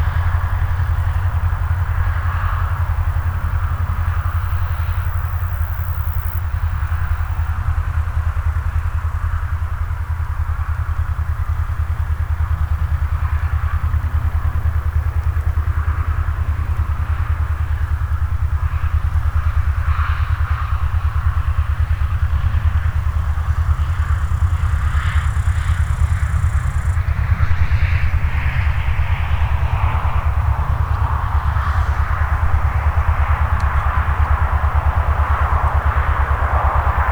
Field, Taavi Tulev, Average day at summer